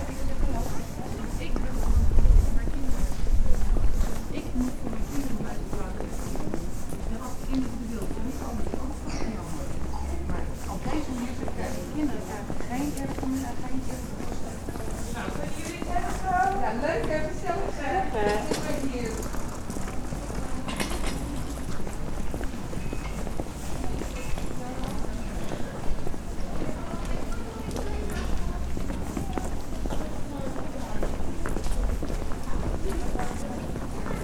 Dorpstraat, Zoetermeer
Shopping street, bicycles
Zoetermeer, The Netherlands